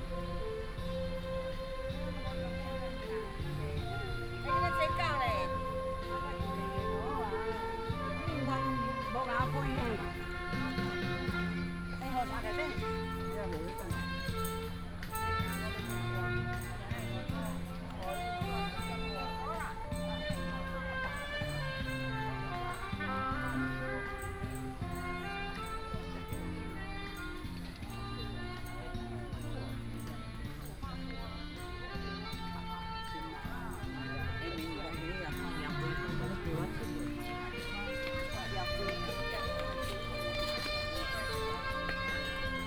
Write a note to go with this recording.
Walking in the park, Traffic sound, play basketball, fountain, Childrens play area, Saxophone show, Binaural recordings, Sony PCM D100+ Soundman OKM II